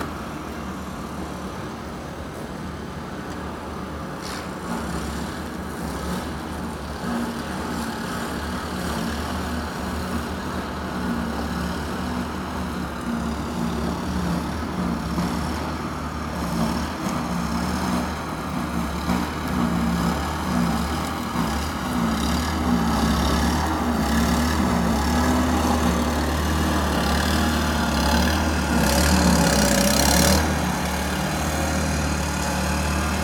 Snowblowers in the suburbs of Chicago after first snowstorm of the year
snowblowers, shovels, passenger plane on approach, Mt. Prospect, Illinois, Chicago, snow